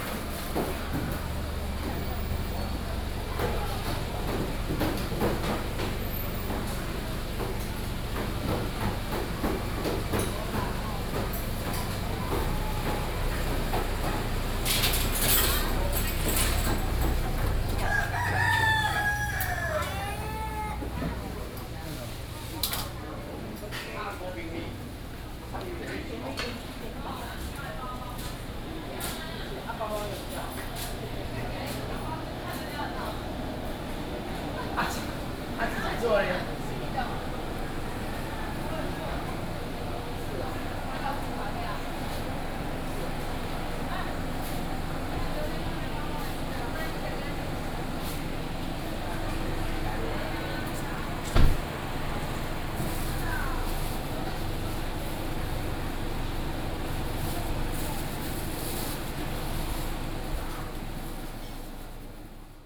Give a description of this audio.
Walking through the traditional market, Binaural recordings, Sony PCM D50